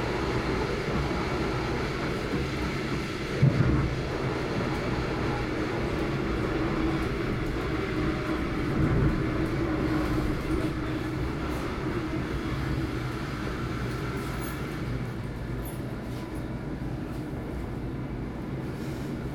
Bruxelles, Belgique - Train to Haren
Voices, ambience in the wagon.